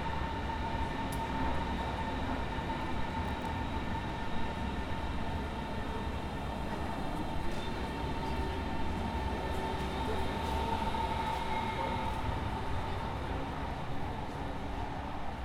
Hackescher Markt S-Bahn Stop
Berlin, Germany